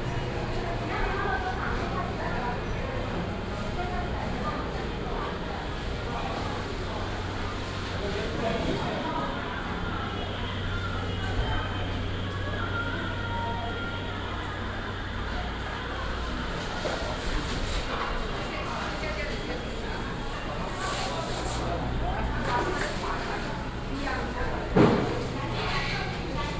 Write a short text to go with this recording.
Walking through the traditional market, Binaural recordings, Sony PCM D50 + Soundman OKM II